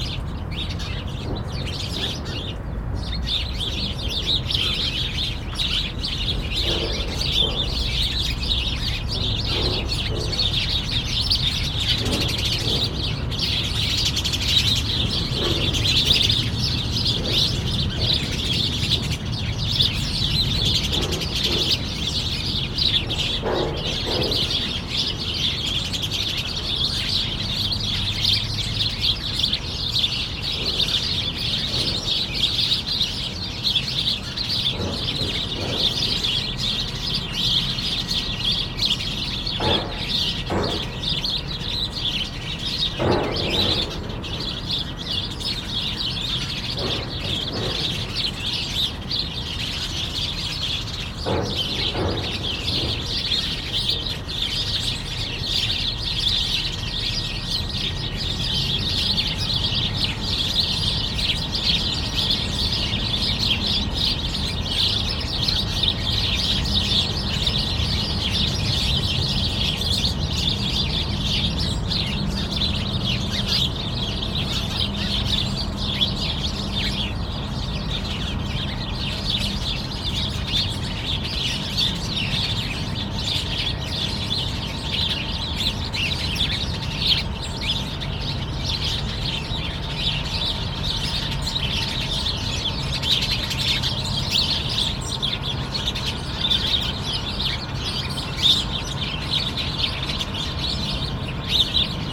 Into this tree, sparrows are fighting on the early morning, because these birds feel so good being fighting waking up !